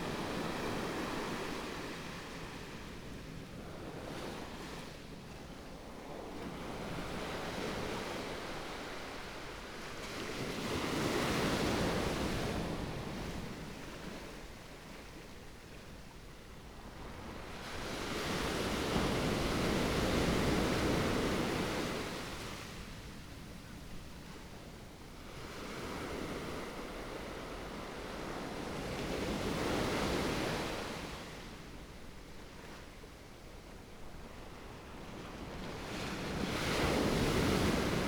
Beibin Park, Hualien City - Sound waves
Sound waves
Please turn up the volume
Binaural recordings, Zoom H4n+ Soundman OKM II + Rode NT4
Hualien County, Taiwan, 24 February 2014